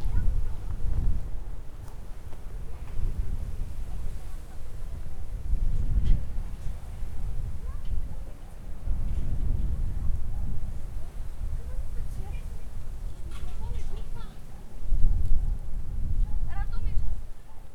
Psarskie village near Srem, railroad tracks - kids chasing hens
as soon as we showed up with the recorders, two kids ran out of the house, chasing their hens for fear we would steal or hurt the animals. the zoom recorders look quite scary, a bit like electroshock guns. both kids and the dog got really nervous.